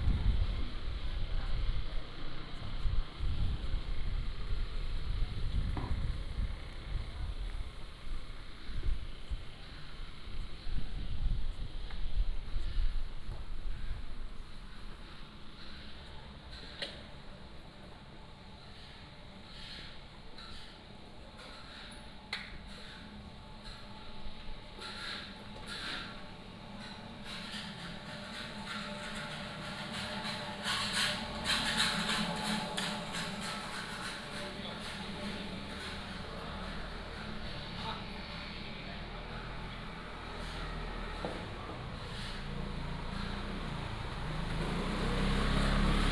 Wujiang St., Jincheng Township - Walking in the Street
Walking in the Street